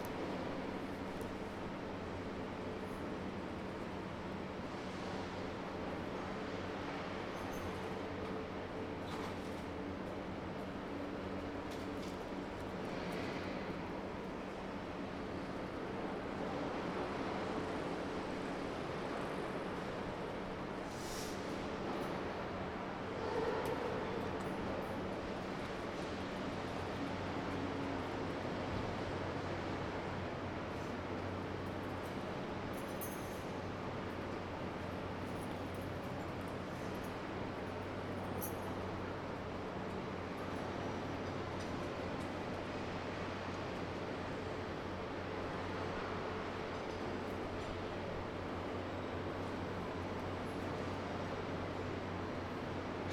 frankfurt, entrance to kunsthalle
reverberation, noise to silence
Frankfurt, Germany, 1 September 2011